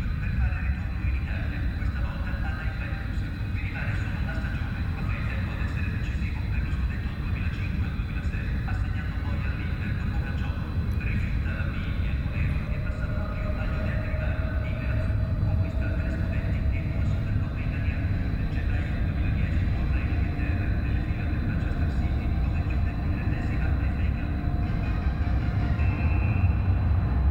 Catania, IT, Stazione Metro Giuffrida - Ambience
Station ambience, train approaching with amazing bass sounds, traveling one stop
Tascam RD-2d, internal mics.
via Vincenzo Giuffrida / corso delle Provincie, 22 February 2014